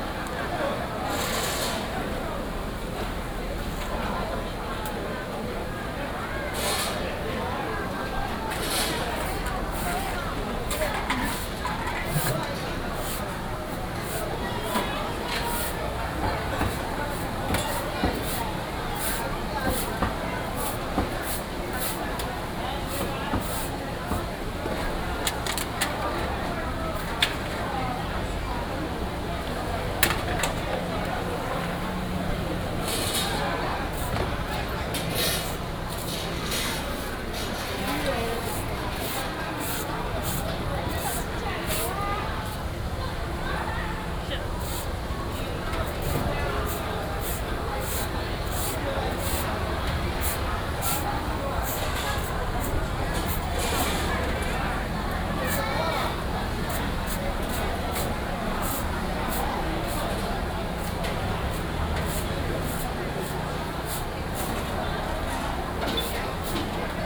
埔里魚市場, Puli Township - night market
night market, Many people are dining
12 November 2016, 20:30